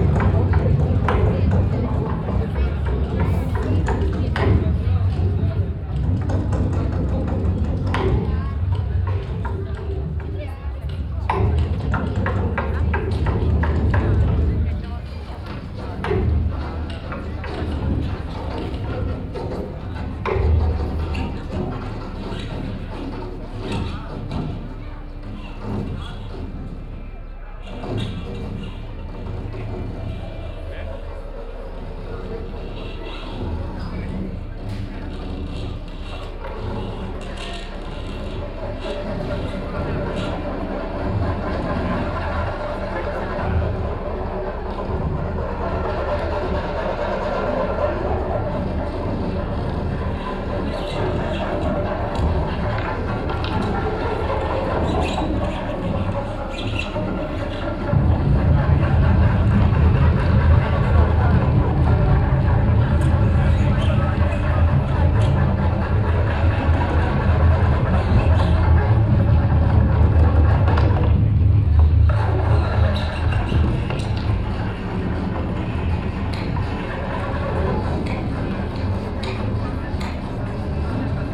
Old Town, Klausenburg, Rumänien - Cluj, Cilelele Clujuli, french performance group point of view
At the street during the Cluj City Festival Cilele 2014. A second recording of sound of the french performance group - scena urbana - point of view.
/276204512560657/?ref=22
international city scapes - field recordings and social ambiences
25 May 2014, 18:20